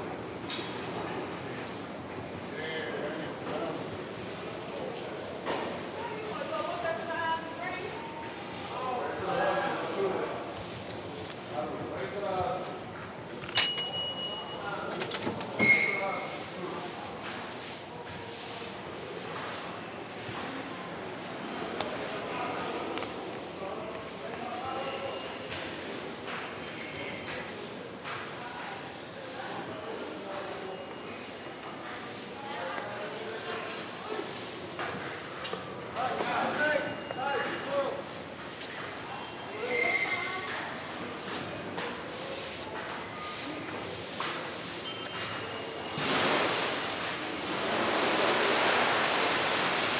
E 42nd St, Extension, NY, USA - Cleaning the Subway
MTA workers clean the subway floors and stairs at Grand Central/42st Street station
United States, April 2022